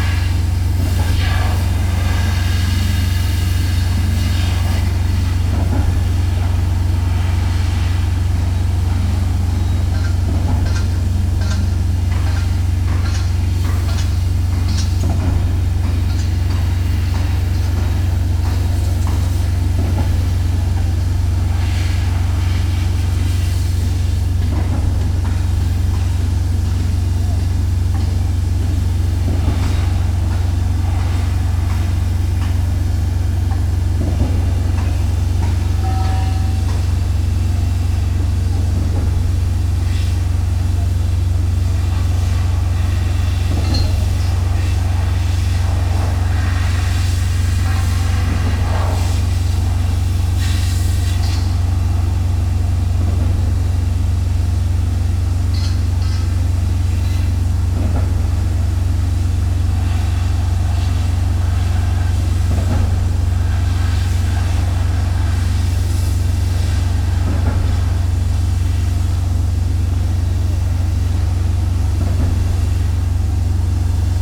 Poznan, Mateckiego, parking lot - construction
new apartments being built in the area. thump of the machines, generators, workers drilling and hammering. (roland r-07)
5 June 2019, 11:30